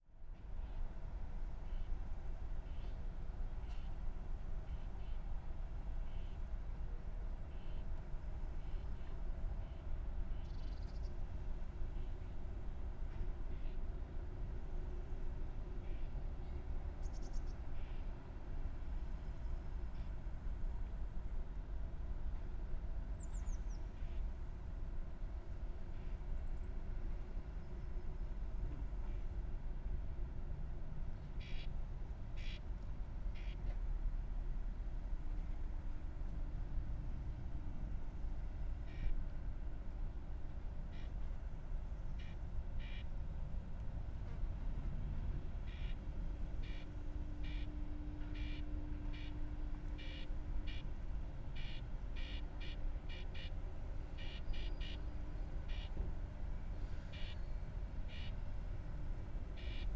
ICE station, Limburg an der Lahn, Deutschland - high speed train
quiet station ambiance, then a ICE3 train rushes through at high speed
(Sony PCM D50, Primo EM172)